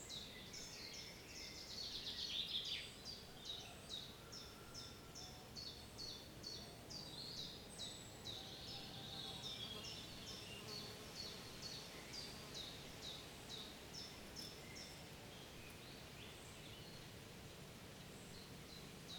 провулок Черняховського, Вінниця, Вінницька область, Україна - Alley12,7sound15birds
Ukraine / Vinnytsia / project Alley 12,7 / sound #15 / birds
27 June 2020